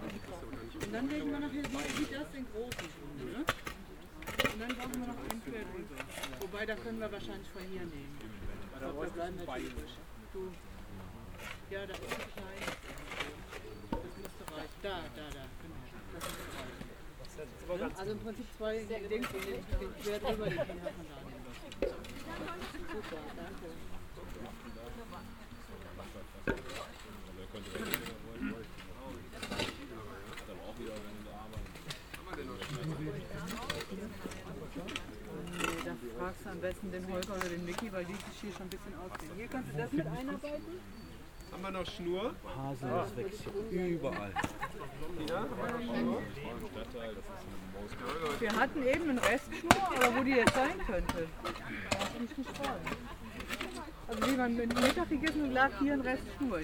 grube louise, digging a hole and conversations